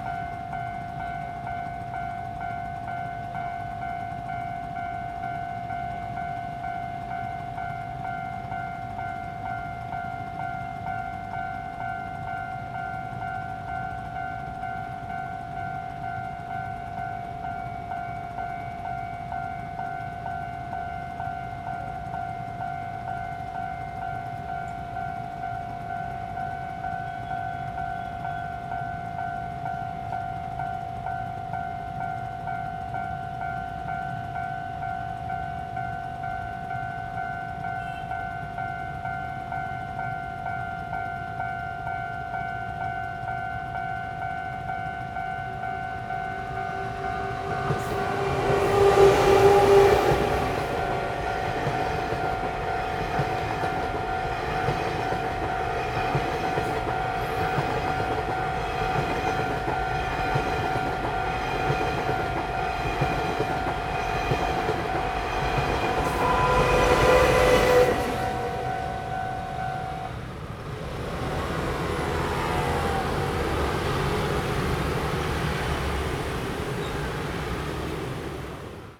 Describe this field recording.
On the railroad crossing, The train runs through, Traffic sound, Zoom H2n MS+XY